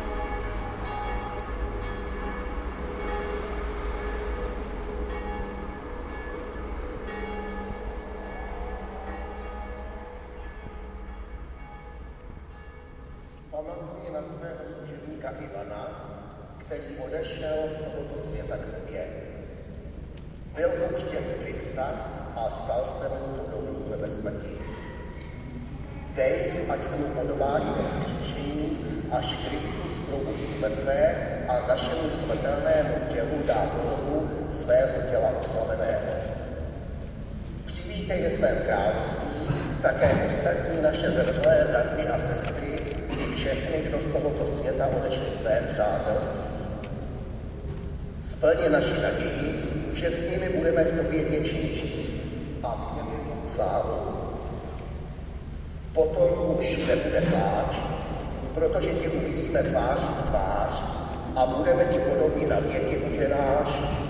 Brevnov Monastery, Church of st. Marketa
funeral inside of the church and sound of the winter landscape around.
Česko, European Union